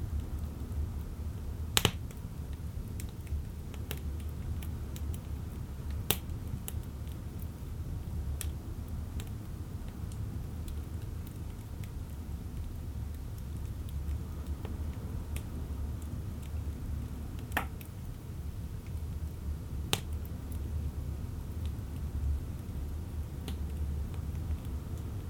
The stove in the fishing hut, White Sea, Russia - The stove in the fishing hut
The stove in the fishing hut.
Звук печи в рыбацкой избе, на улице шторм.
June 2014